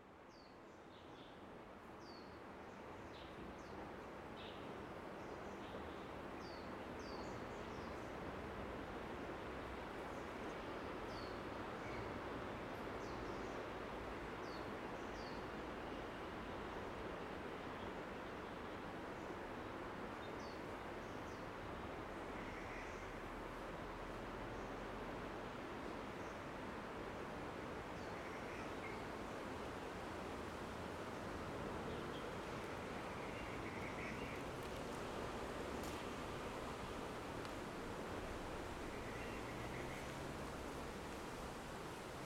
100台灣台北市中正區汀州路三段230巷18弄2號 - 風吹落葉
寶藏巖下停車場草地
風吹落葉
post by YiChin